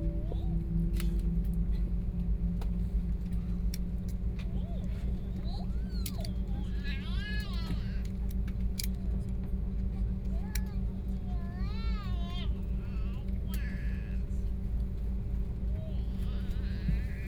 Hongqiao Airport, Shanghai - Inside the plane
Inside the plane, Aircraft interior voice broadcast message, Binaural recording, Zoom H6+ Soundman OKM II